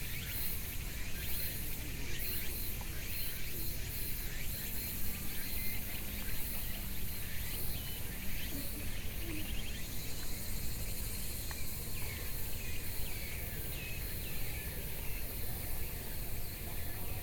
Hutoushan Park - soundwalk
Birdsong, Gradually go down, Sony PCM D50 + Soundman OKM II